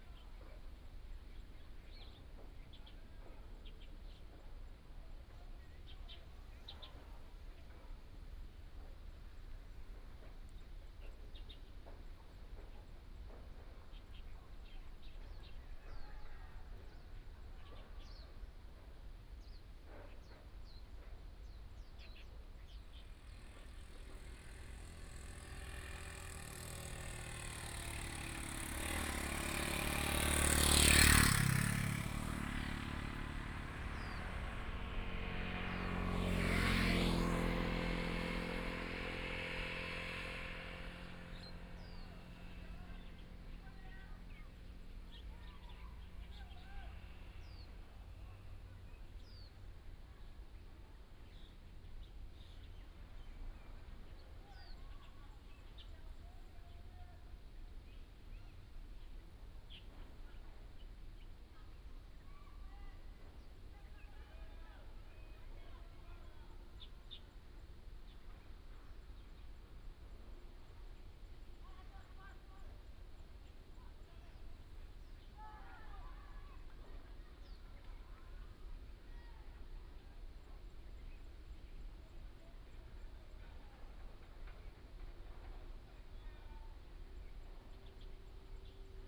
Zhonghua Rd., Dayuan Dist., Taoyuan City - Landing
Landing, birds sound, traffic sound, Near the airport
Dayuan District, Taoyuan City, Taiwan, 18 August 2017, 15:43